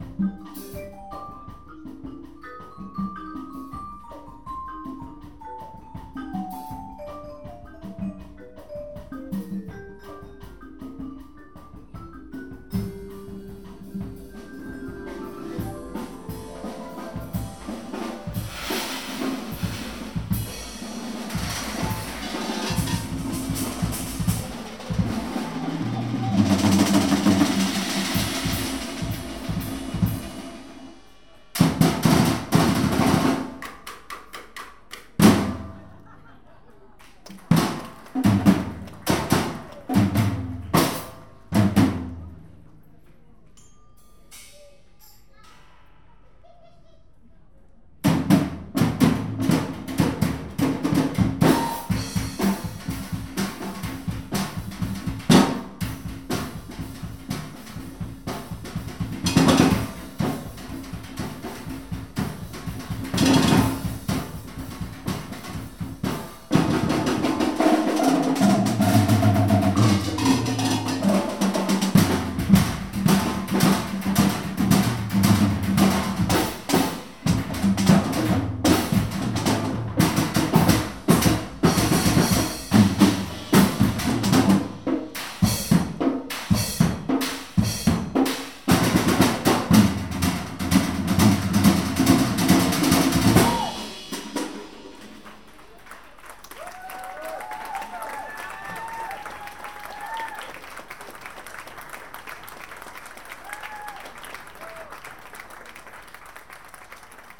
Bumerang band (Zagreb), HR, gig.
You can hear marimbas and various percussion instrument in a medieval solid rock amphitheater with a wooden roof.